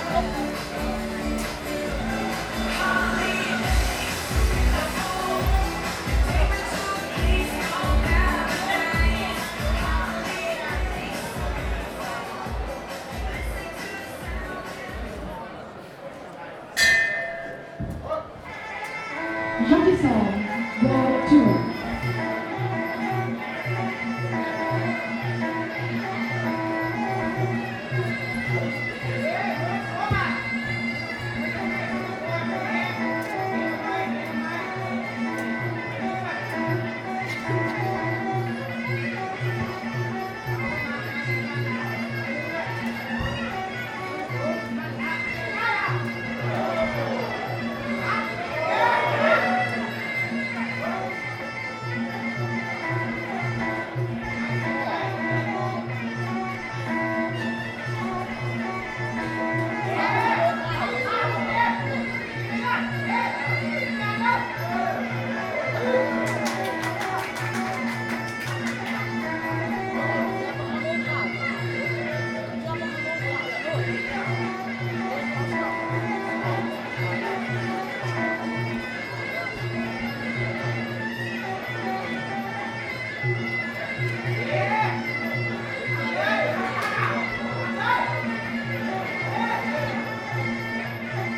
Muay Thai fighting part 2 in CM Boxing Stadium